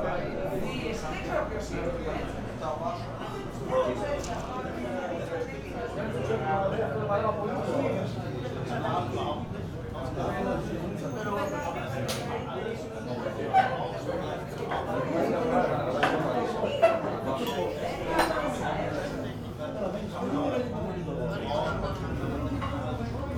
Kallidromiou road, Athen - street cafe ambience
friendly cafe in Kallidromiou road, on a Saturday early afternoon. 2nd visit here, for a greek coffee.
(Sony PCM D50)